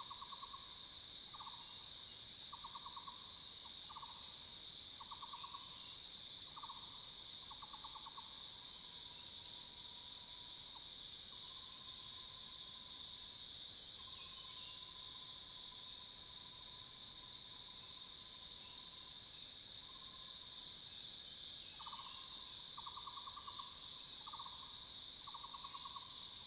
油茶園, 五城村 Yuchih Township - In the morning
Cicada sounds, Birds called, early morning
Zoom H2n MS+XY